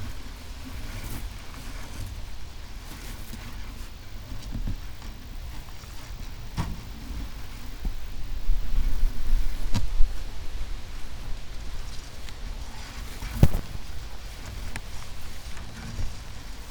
path of seasons, june meadow, piramida - walking, string thing touching tall grass
Maribor, Slovenia, 13 June